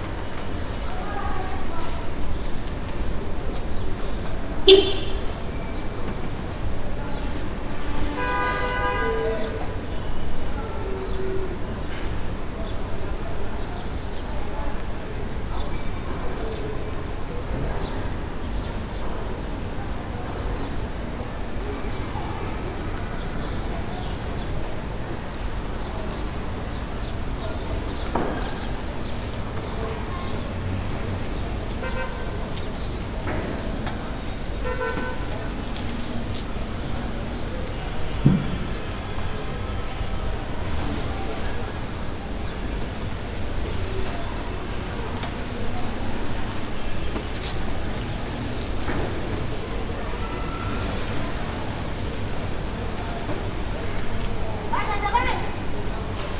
Uttara, Dhaka, Bangladesh - Afternoon ambience, a neighbourhood in Uttara, Dhaka
Uttara is a upper middleclass/ middleclass neighbourhood built near Dhaka internation airport, outside the main city area. This a summer afternoon recording, I was standing with the mic on a small street, off-main road.